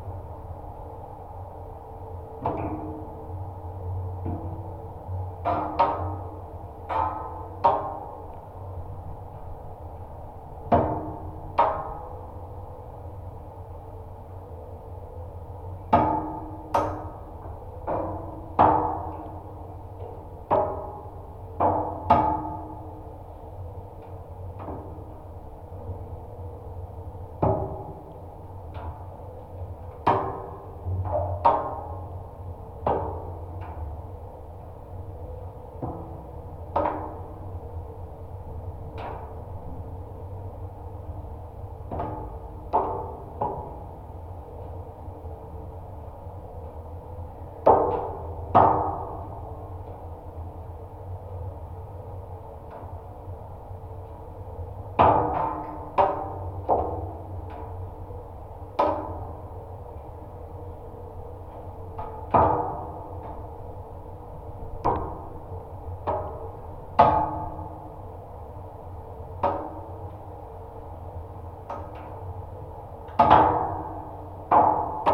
contact mics and geophone on Majori Sport Hall constructions. The Hall is known for: Recognition at the Award of Latvian architecture 2007
Nomination for Russian architecture prize Arhip 2009
Shortlisted at EU prize for Contemporary architecture - Mies Van Der Rohe Award 2009
Vidzeme, Latvija, July 2020